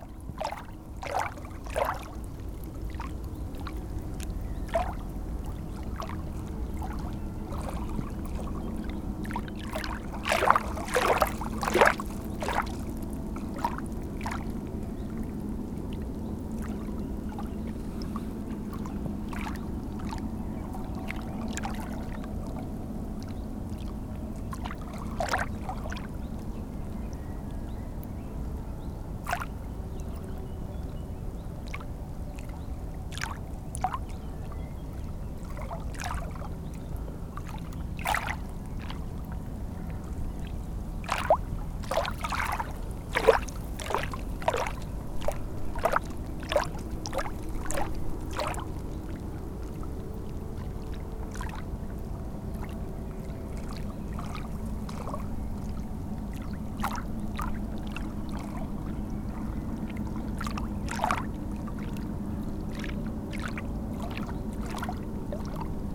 Recordinf of the very small waves of the Seine river in Aizier, in a bucolic place.
Aizier, France